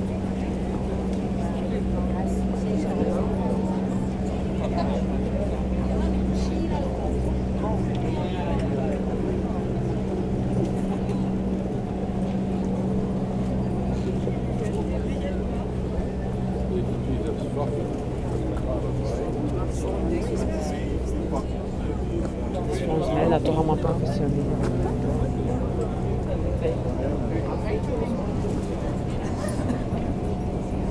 Maastricht, Pays-Bas - Local market
On the main square of Maastricht, there's a local market, essentially with food trucks. Discreet people buy meal in a quiet ambiance.